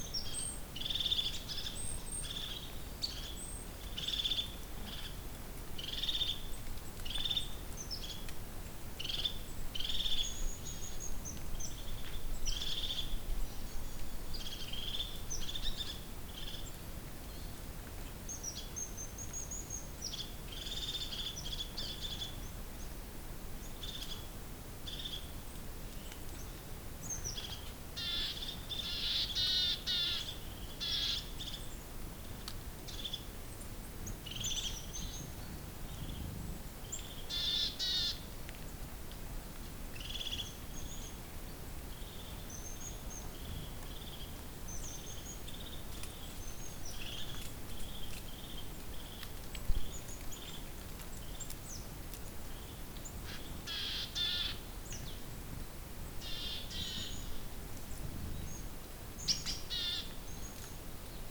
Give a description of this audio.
Soundclip capturing bordsongs, birds flying over on the edge of forest. Moisture retained by trees condensates and drips down on fallen leaves. Cold, little bit windy morning at Veporské vrchy mountain range in central Slovakia.